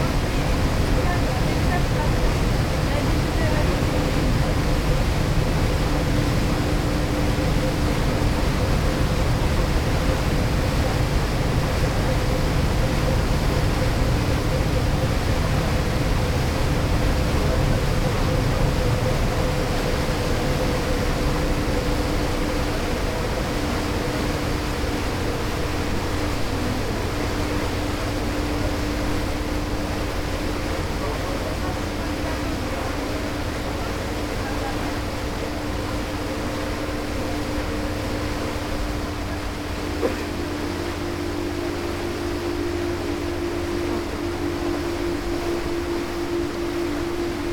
white noise wave sound from the back of the ferry